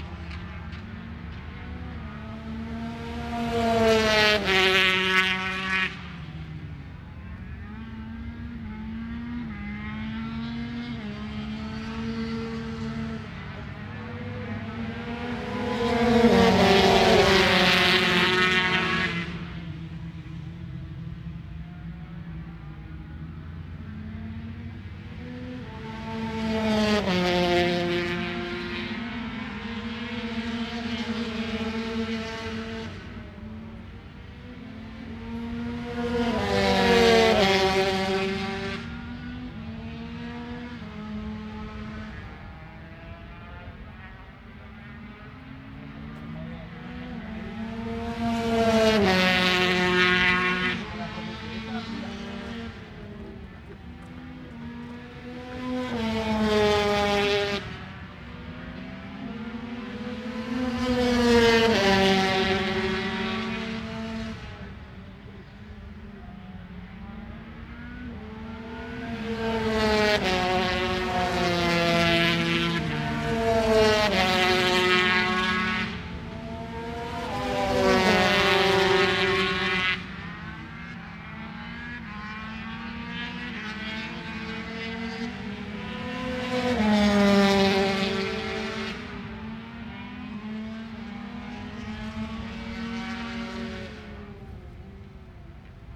Unnamed Road, Derby, UK - British Motorcycle Grand Prix 2004 ... 125 free practice ...
British Motorcycle Grand Prix 2004 ... 125 free practice ... one point stereo mic to minidisk ... date correct ... time optional ...
23 July, 09:00